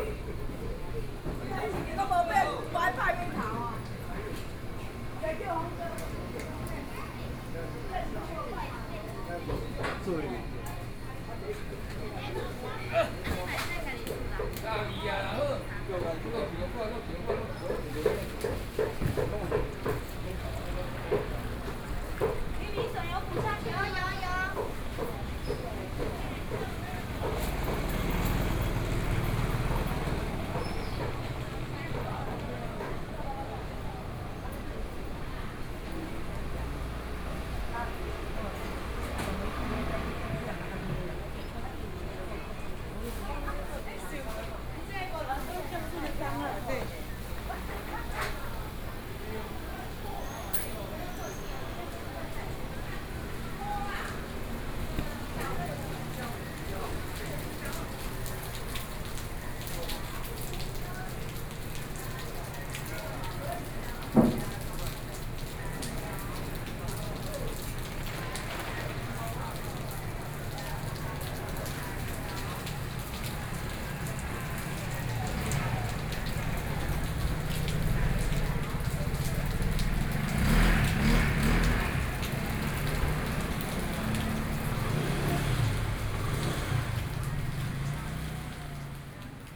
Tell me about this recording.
Walking through the market in the building, Binaural recordings, Zoom H4n+ Soundman OKM II